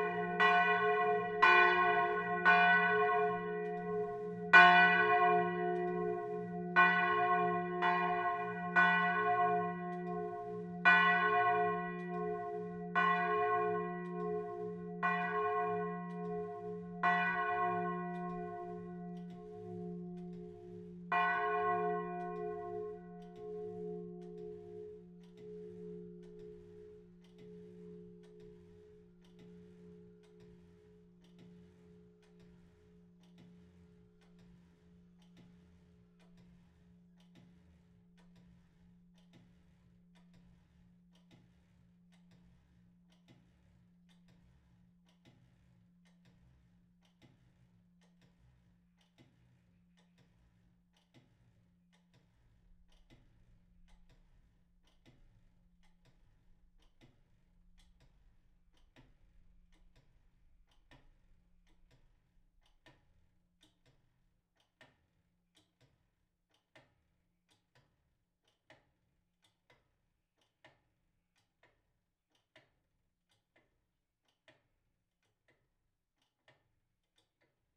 Le Bourg, La Hoguette, France - La Hoguette - Église Saint-Barthélemy
La Hoguette (Calvados)
Église Saint-Barthélemy
Volée - Tutti
11 September 2020, 11:30